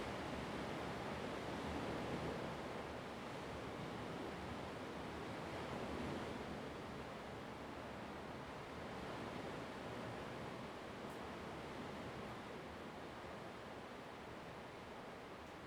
Lüdao Township, Taitung County - Next to a large cliff
Next to a large cliff, sound of the waves, Traffic Sound
Zoom H2n MS +XY
Taitung County, Taiwan, October 2014